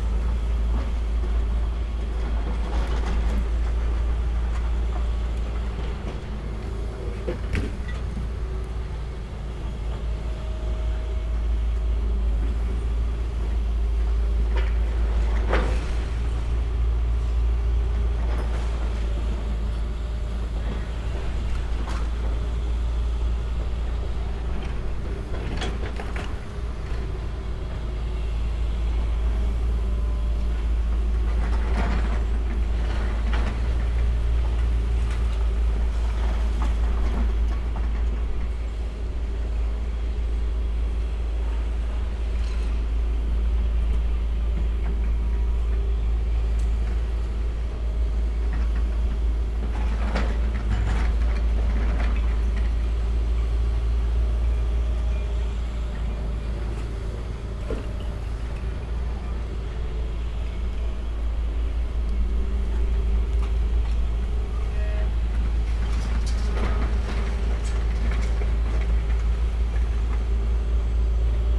Basingstoke Road, Reading, UK - Demolition of old pink Art Deco factory recorded from Ultima Business Solutions Carpark
Recorded through the fence looking directly onto the building site until I was told to get off because it's private property.